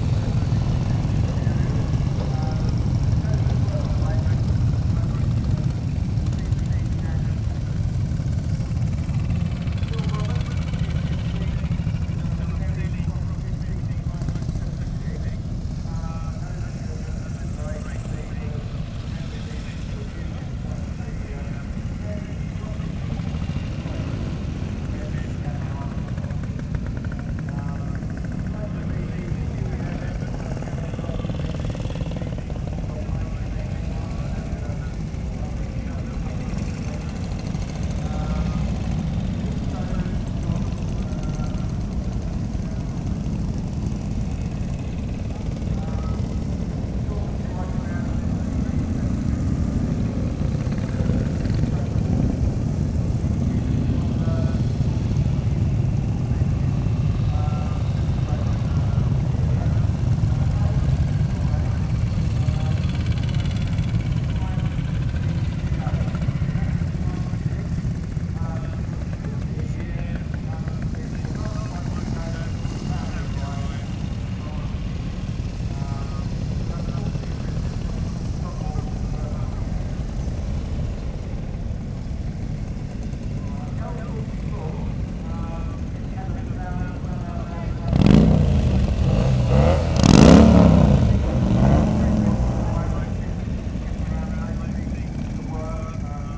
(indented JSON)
{
  "title": "Oxford Rd, Reading, UK - Vintage and Classic motorbikes at Woodcote Steam Rally",
  "date": "2019-07-14 16:51:00",
  "description": "Recorded at the Woodcote Steam Rally, lovely event where steam traction engines, steamrollers and a myriad of similar restored vehicles are on show, and have their turn at parading around the show ground. This recording is of the motorcycles doing their round, with a commentary to fit. Sony M10 with built-in mics.",
  "latitude": "51.54",
  "longitude": "-1.07",
  "altitude": "158",
  "timezone": "Europe/London"
}